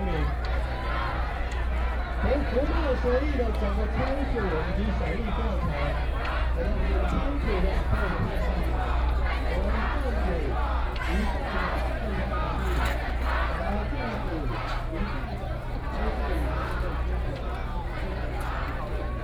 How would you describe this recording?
A lot of tourists, Protest crowd walking through, Please turn up the volume a little. Binaural recordings, Sony PCM D100+ Soundman OKM II